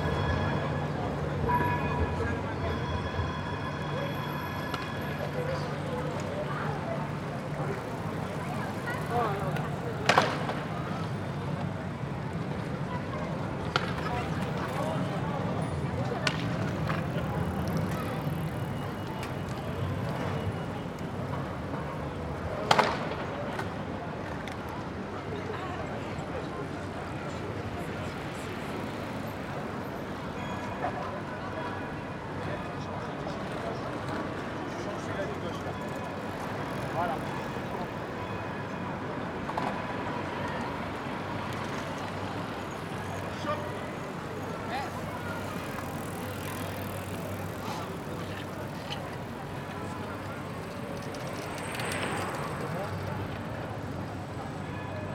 Trams, bikers, skaters.
Tech Note : Sony PCM-D100 internal microphones, wide position.